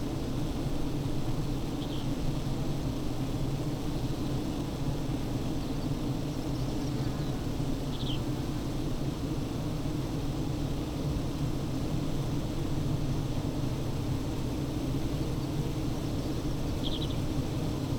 Green Ln, Malton, UK - bee hives ...
bee hives ... Zoom F6 to SASS ... eight hives in pairs ... SASS on floor in front of one pair ... bird song ... calls ... skylark ... yellow wagtail ..